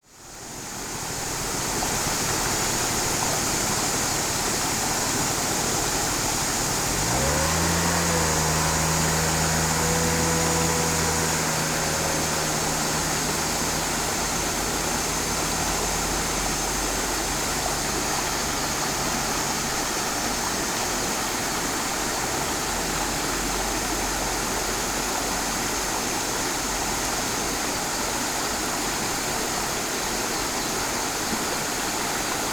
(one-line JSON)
{"title": "金山區三界里, New Taipei City - The sound of water streams", "date": "2012-07-11 07:26:00", "description": "The sound of water streams, At the bridge, Cicadas cry\nZoom H4n+Rode NT4(soundmap 20120711-17)", "latitude": "25.23", "longitude": "121.62", "altitude": "37", "timezone": "Asia/Taipei"}